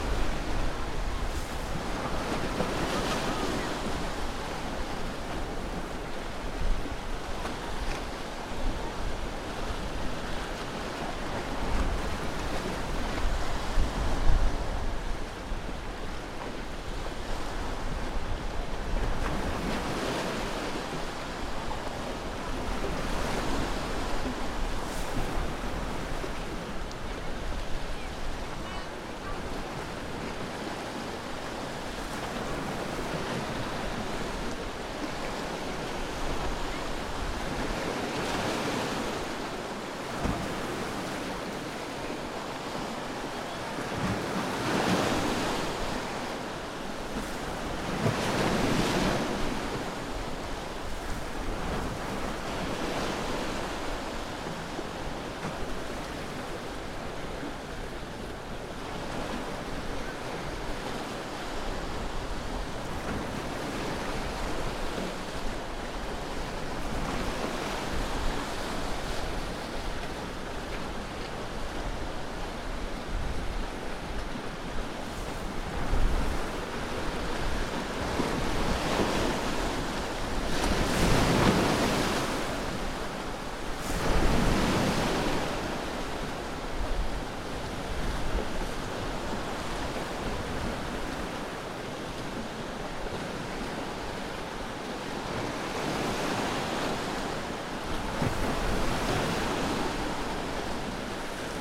Avinguda del Pantà, Sueca, Valencia, España - Mi Perelló
Grabación en la zona de la entrada al puerto en El Perelló en una zona rocosa cerca de unos faros mientras comenzaba a atardecer.
Comunitat Valenciana, España, 17 August 2020